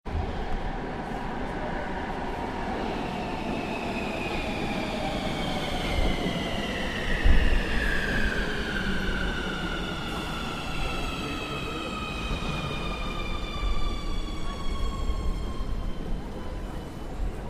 Nürnberg, HbF, U3
arrival of the new full automatic metro "U3" in nürnberg.